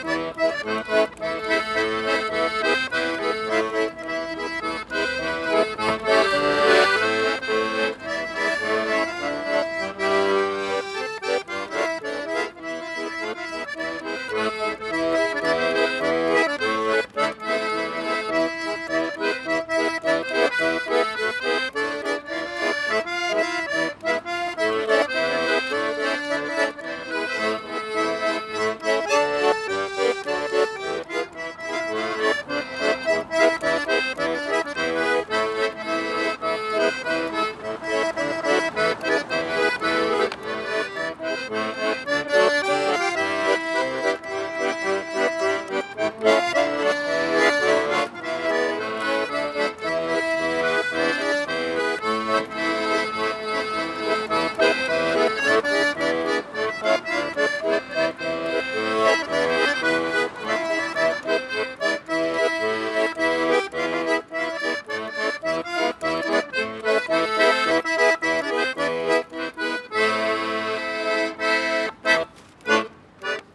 Salzburg Taxham Europark S-Bahn (Haupteingang), Salzburg, Österreich - mr marian plays accordion
mr. marian from romania plays accordion in front of the shopping centre